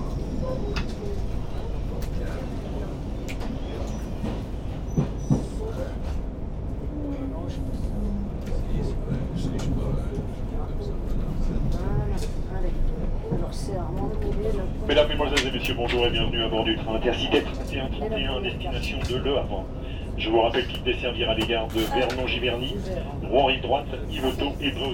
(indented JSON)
{
  "title": "L'Europe, Paris, France - Train to Le Havre.",
  "date": "2016-07-20 18:30:00",
  "description": "Taking the train from Paris to Le Havre. The neighbours are playing cards.",
  "latitude": "48.88",
  "longitude": "2.32",
  "altitude": "55",
  "timezone": "Europe/Paris"
}